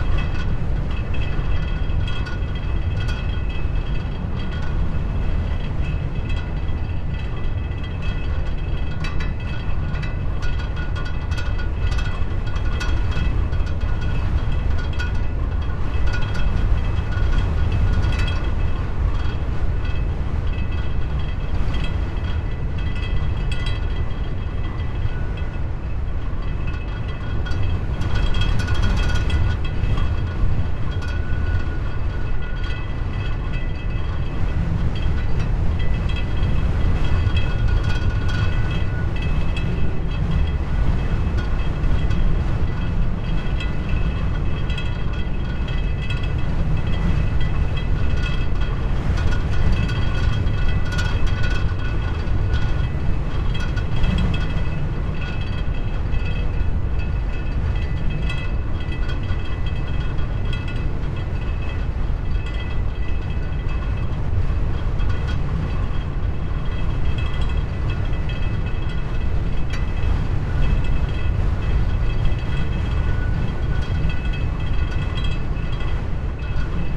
afsluitdijk: parking - the city, the country & me: vibrating fence
stormy weather, vibrating fence
the city, the country & me: july 30, 2015